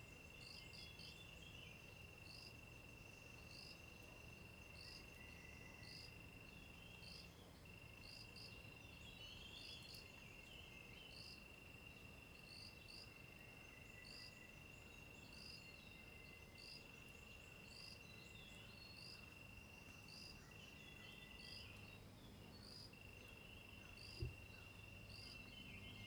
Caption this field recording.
Birds singing, face the woods, Zoom H2n MS+ XY